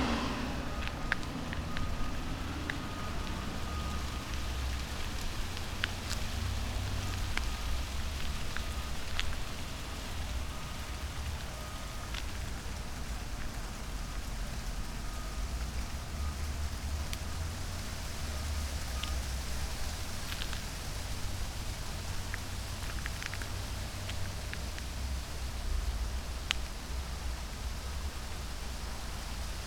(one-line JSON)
{"title": "dead end, Trieste, Italy - cat, wind, poplar tree", "date": "2013-09-09 17:45:00", "latitude": "45.61", "longitude": "13.79", "altitude": "4", "timezone": "Europe/Rome"}